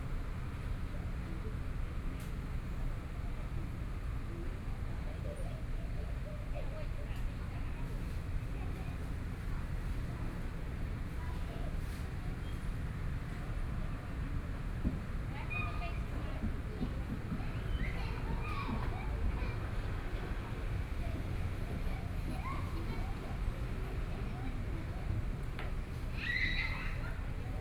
In the park, Children and the elderly, Environmental sounds, Traffic Sound
Please turn up the volume a little
Binaural recordings, Sony PCM D100 + Soundman OKM II
Taipei City, Taiwan, February 2014